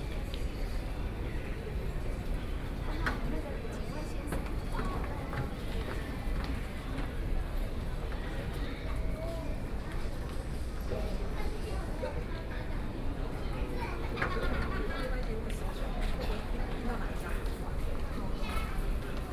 Minquan W. Rd. Station, Taipei City - waiting for the train
Minquan West Road Station, On the platform waiting for the train, Sony PCM D50 + Soundman OKM II